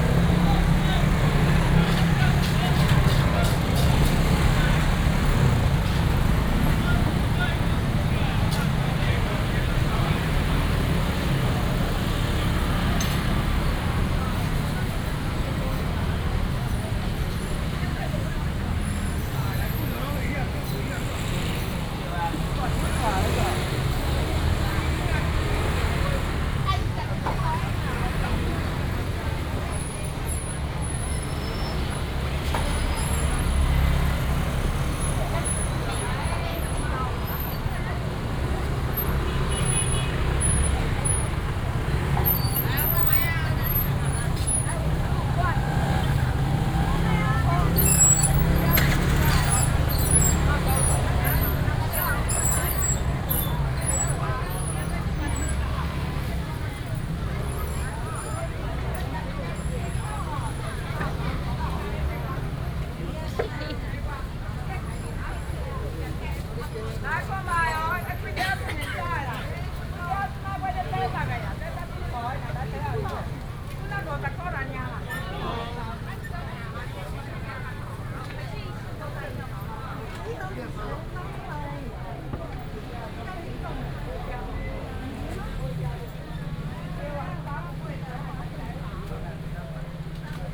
Qingshui St., Tamsui Dist., New Taipei City - Walking through the traditional market
Walking through the traditional market, Very narrow alley, traffic sound